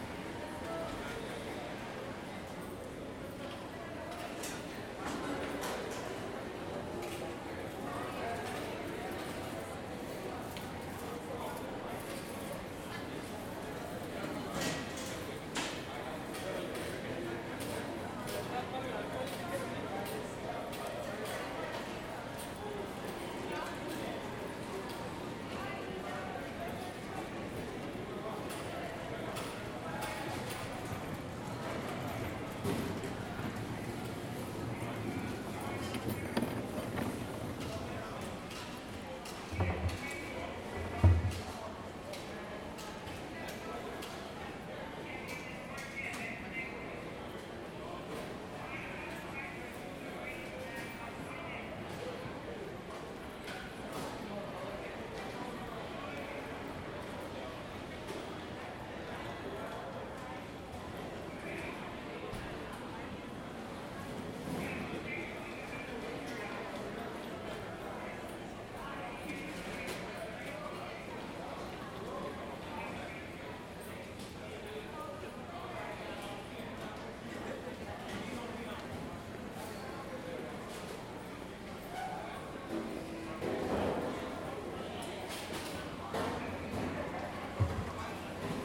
LA - union station, big hall; passengers and customers passing by, announcements;